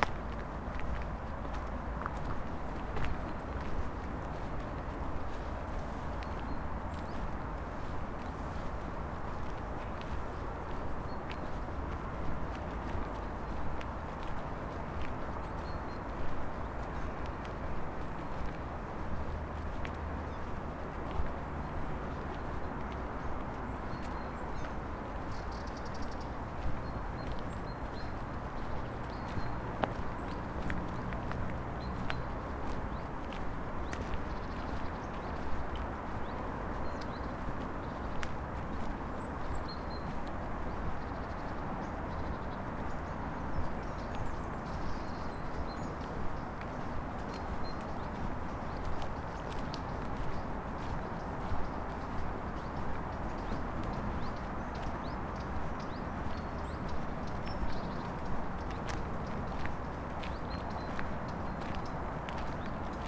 {"title": "Campolide, Portugal - Passeio Sonoro: Calhau - Serafina", "date": "2014-11-05 15:02:00", "description": "Passeio sonoro entre o Parque do Calhau e o Bairro da Serafina, em Lisboa.", "latitude": "38.73", "longitude": "-9.17", "altitude": "103", "timezone": "Europe/Lisbon"}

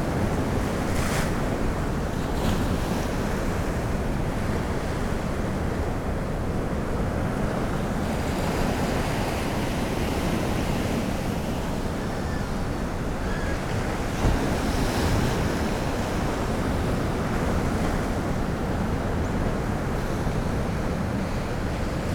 {"title": "Whitby, UK - high tide ...", "date": "2018-12-04 10:00:00", "description": "high tide ... lavaliers clipped to sandwich box ... bird calls from ... redshank ... rock pipit ... oystercatcher ... black-headed gull ... herring gull ...", "latitude": "54.49", "longitude": "-0.61", "altitude": "1", "timezone": "Europe/London"}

